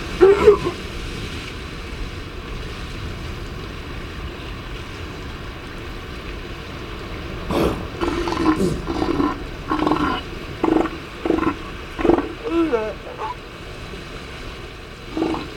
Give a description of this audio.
San Benitos Oeste Island ... Isla San Benito ... elephant seals mothers and pups loafing on a rocky outcrop ... breaks and handling noises ... Telinga ProDAT 5 to Sony Minidisk ... sunny warm clear morning ... peregrine calls at end ...